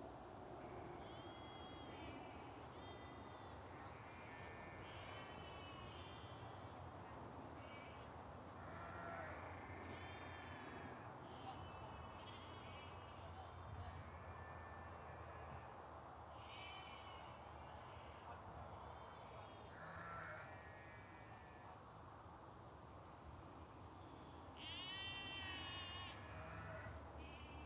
A field of sheep, Medmenham, UK - shearing day
I was invited by a friend at the Berkshire Guild of Weavers, Spinners and Dyers to help out on shearing day. This flock is a conservation grazing flock featuring Shetlands, Jacobs, Black Welsh Mountains, Herdwicks... possibly some other ones? I was very late and when I arrived the shearers had done most of the sheep already, I hung my microphones in a tree to record the last few, and you can hear the clippers; the sheep all going crazy because the lambs and ewes don't recognise each other so easily after the ewes have been sheared; the nearby road; wind in the trees; insects and then the shearers packing up their stuff and driving home. You can also hear us sorting the fleeces, bagging up any that handspinners might like and chucking all the worse ones into a sack for the Wool Marketing Board to collect. Our voices echo in a really strange way because it's such a long, open field.